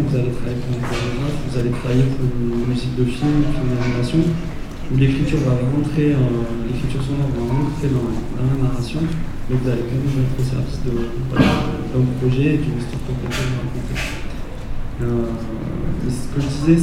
RadioPhonie est le premier festival d’Occitanie dédié au média radio, à la création sonore et au podcast. Au cours de ces trois jours, le Centre culturel Bellegarde accueille séances d’écoutes, performances live et tablerondes avec l’envie de créer du lien entre professionnels, auteurs et auditeurs. Un événement convivial qui fait cohabiter une programmation locale et internationale tout en proposant une sélection à destination du jeune public. Chaque journée se termine par une session musicale animée par les DJs de Campus FM. Ce festival s’inscrit dans la continuité des évènements mensuels organisés en partenariat entre le Centre culturel Bellegarde et Campus FM.
Captation : ZoomH6
France métropolitaine, France, 11 June 2022